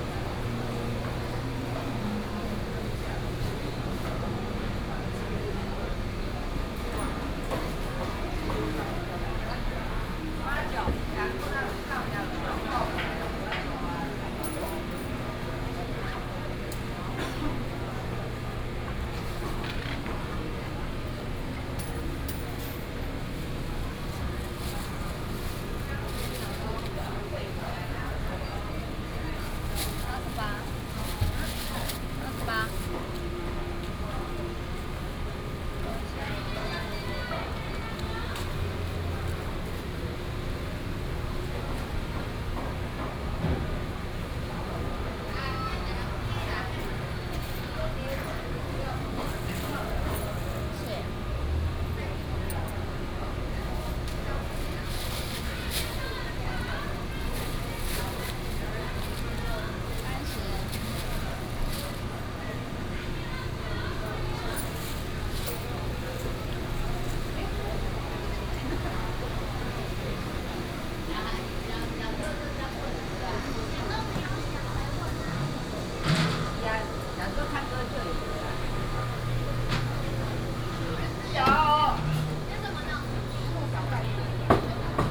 忠孝黃昏市場, Zhongli Dist. - walking through the traditional market
Traditional market, traffic sound
Zhongli District, Taoyuan City, Taiwan, 1 August